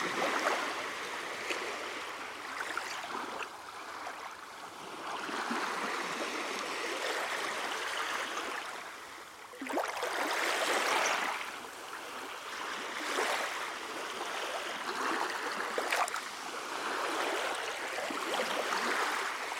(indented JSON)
{
  "title": "waves of Nida, binaural sea",
  "date": "2011-11-16 13:28:00",
  "description": "binaural recording of soft sea waves",
  "latitude": "55.30",
  "longitude": "20.97",
  "timezone": "Europe/Vilnius"
}